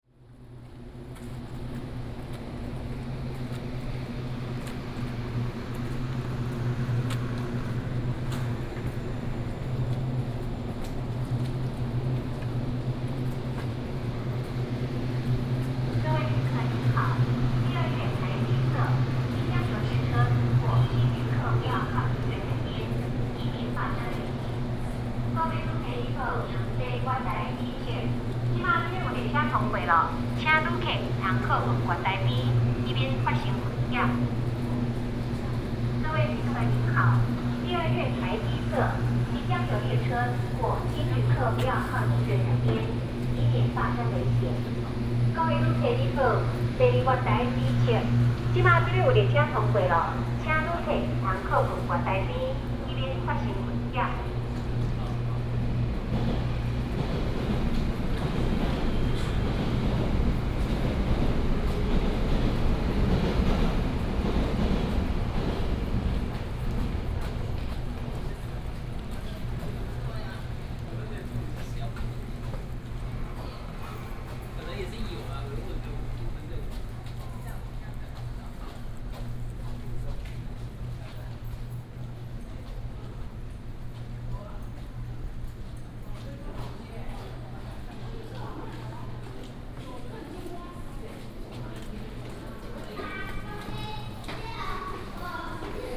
瑞芳火車站, New Taipei City - Walking in the train station platform
Walking in the train station platform, Station Message Broadcast
New Taipei City, Taiwan, 2 December, 07:45